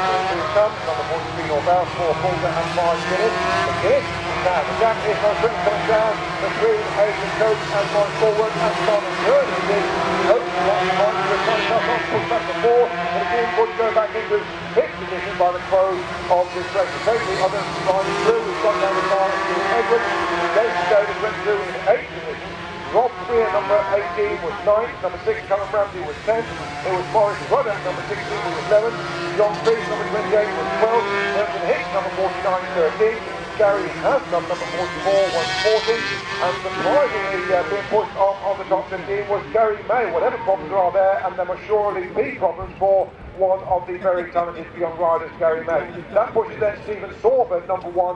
BSB 1998 ... 250 race ... commentary ... one point stereo mic to minidisk ... date correct ... time optional ... John McGuinness would have been a wee bit young ...
6 September, 16:00